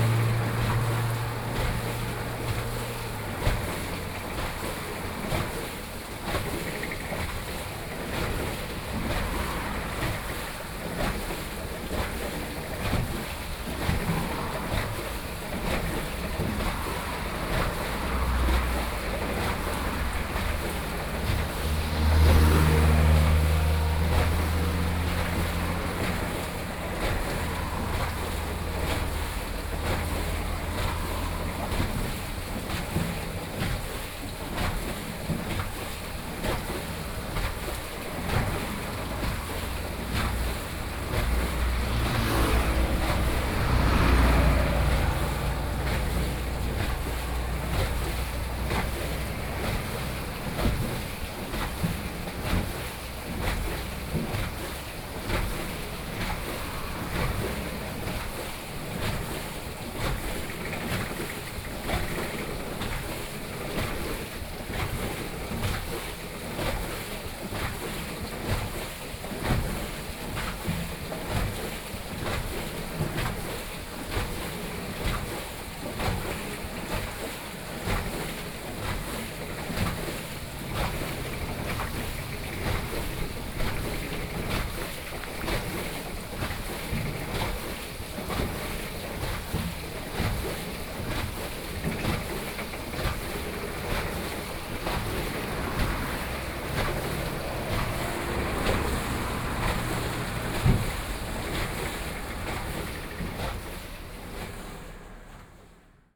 三星鄉大隱村, Yilan County - Waterwheel
Waterwheel, Hydro, Small village, Traffic Sound
Sony PCM D50+ Soundman OKM II